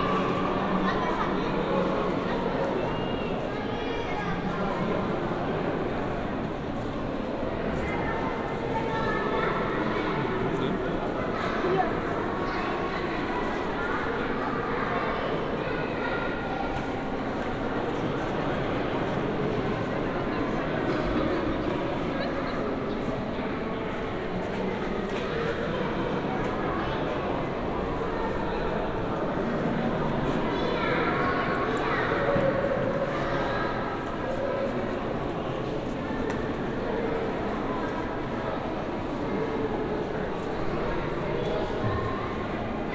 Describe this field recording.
ambience in the big hall of the Museum für Naturkunde. huge skeletons of dinosaurs all around, lots of visitors on this Saturday afternoon, (Sony PCM D50, EM172 binaural)